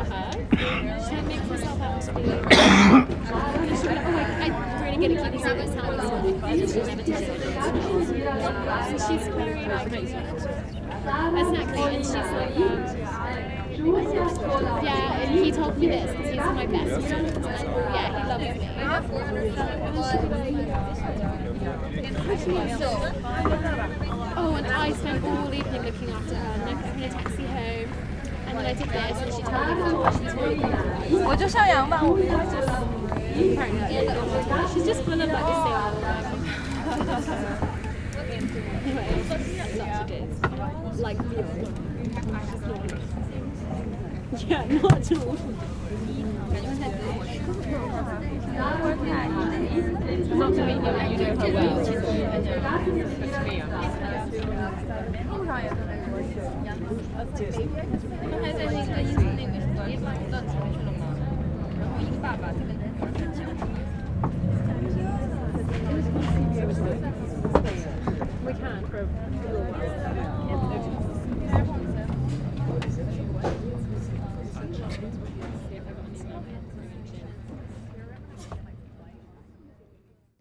Castello, Venezia, Italie - People Arsenale
People at the Biennale Arsenale bar, Zoom H6
Venezia, Italy, 22 October